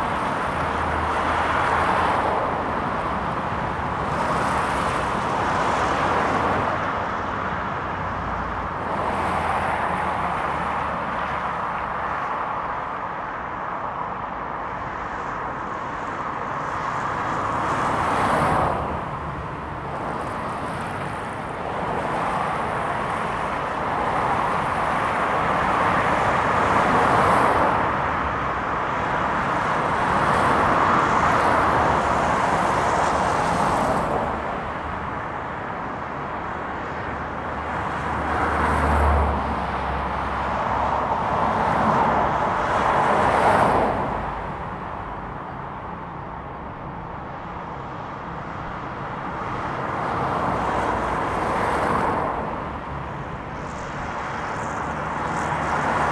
Radargatan, Uppsala, Švédsko - pedestrian bridge over highway, Uppsala
gray sounds of cars passing below. very mediocre.
recorded with H2n, 2CH, handheld